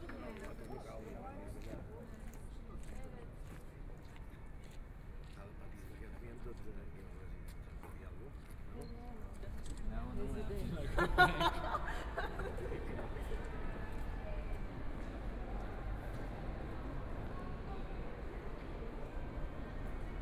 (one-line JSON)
{"title": "Max-Joseph-Platz, Munich, Germany - Navigation", "date": "2014-05-11 12:38:00", "description": "Walking through the different buildings and streets, Pedestrians and tourists, Navigation", "latitude": "48.14", "longitude": "11.58", "altitude": "527", "timezone": "Europe/Berlin"}